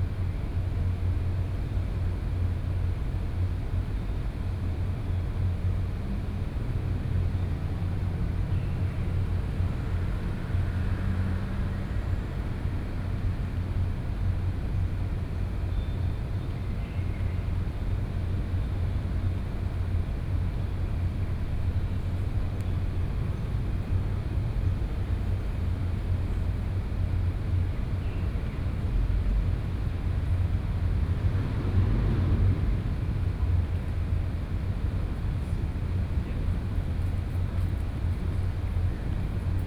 Ln., Sec., Bade Rd., Da’an Dist. - Air-conditioning noise
Greenbelt Park, Air-conditioning noise, Hot weather, Bird calls